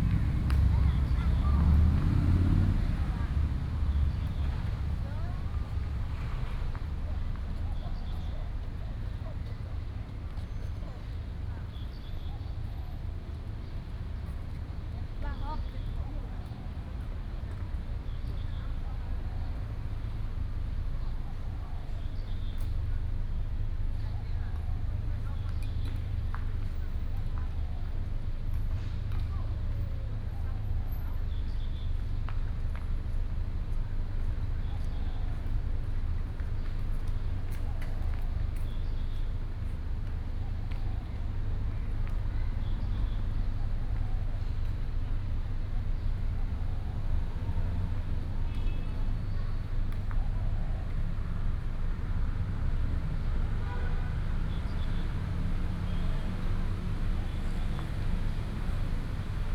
Hot weather, in the Park, Traffic noise, Bird calls